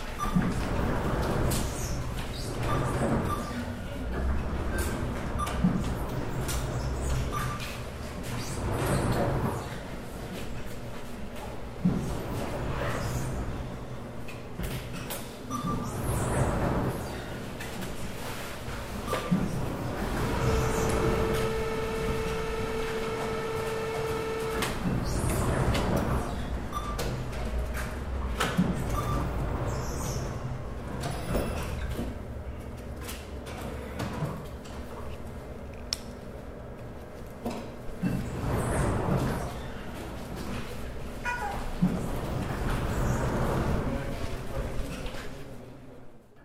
Oststadt, Hannover, Deutschland - Cash machine room